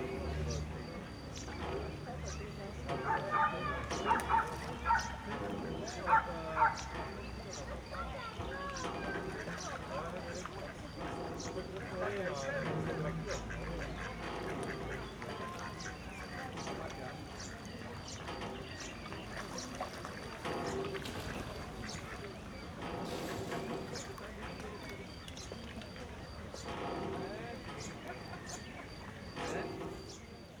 Maribor, Na Otok, landing stage - ambience at river Drava
ambience at river Drava, lovely place, early sunday evening.
Maribor, Slovenia, 27 May 2012, 17:50